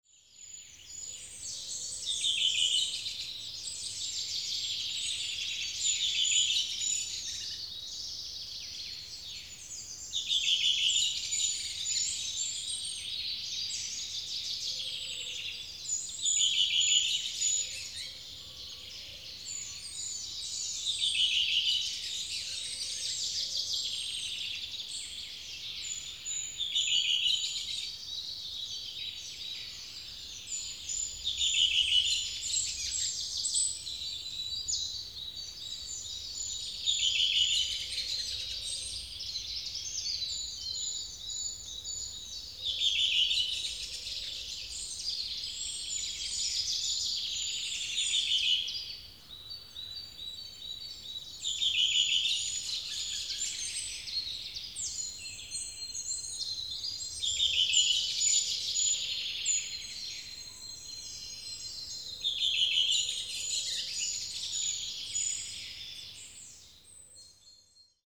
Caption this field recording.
Redwings and other birds on a small bog island with mixed forest.